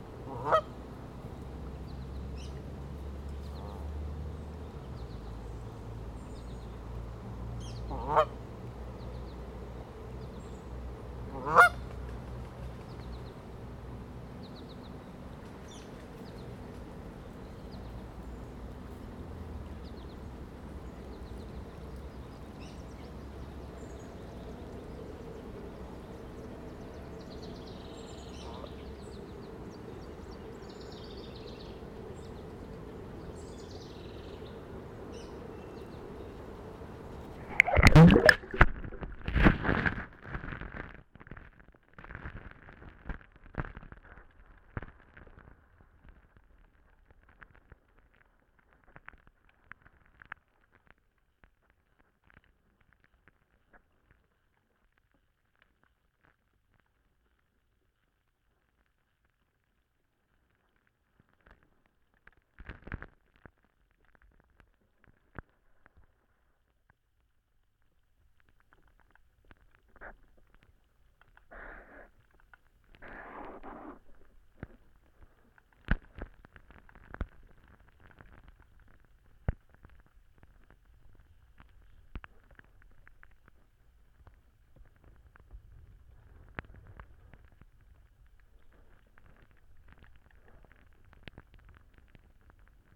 Merritt Trail, St. Catharines, ON, Canada - The Twelve | Abandoned GM Lands
The lower Twelve Mile Creek in the City of St. Catharines ON has been entirely reconstructed for industrial use since the mid nineteenth century, first for the Welland Canal then for DeCew Generating Station. An abandoned General Motors plant sits on the east side of the lower Twelve. I set my H2n recorder opposite that site on the Merritt Trail on the west side of the creek then threw a hydrophone some meters out into the water. Above water, we hear many birds, Canada Geese honking and shaking, my dog panting and city traffic. Below water, the sounds are a mystery as there is no way to see the life that carries on in this murky water. One week before this recording, an environmental report was submitted to the City regarding storm sewer outfalls from the abandoned plant, including that the former GM sewer and municipal sewer outfalls exceed the PCB threshold. The Twelve empties into Lake Ontario, one of the Great Lakes which hold 23% of the world's surface fresh water.
Golden Horseshoe, Ontario, Canada, 24 July 2020, 13:15